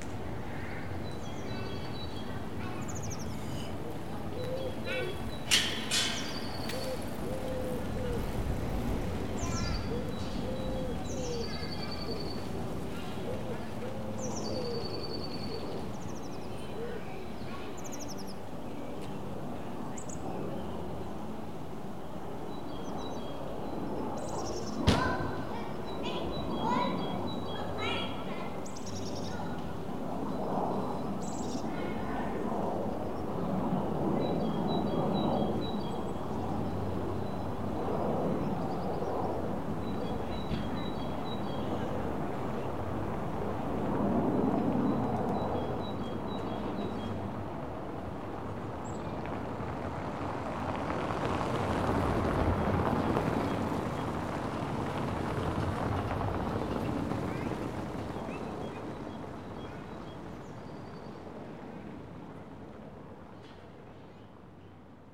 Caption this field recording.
Evangelische Kirche, Zoom H4n, Geläut Sonntagsgottesdienst